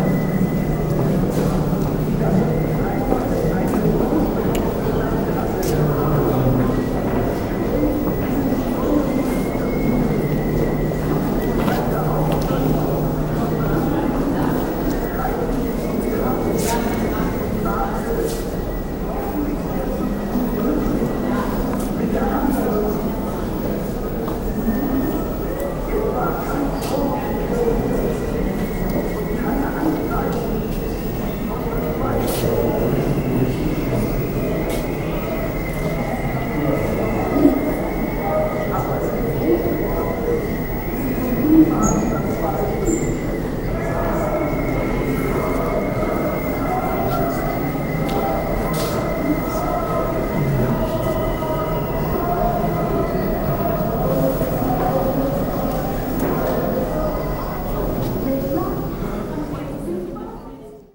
sound of a video documentation about a fluxus happening during the beuys exhibition -parallel people talking and walking
soundmap d - social ambiences, art spaces and topographic field recordings